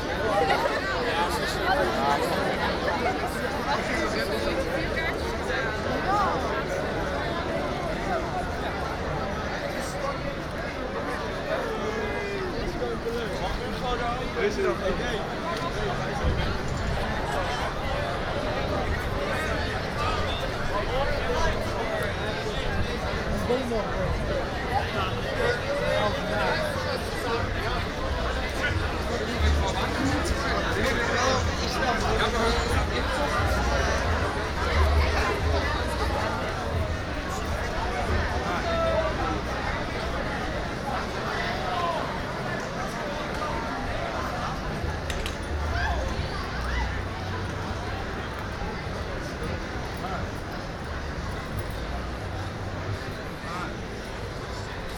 A walk through the city (part 6 - nightlife) - The Hague Nightlife
A walk through the city center on Saturday evening. (Mainly recorded on Plein). Binaural recording.
The Hague, Netherlands, 21 May 2011, 23:03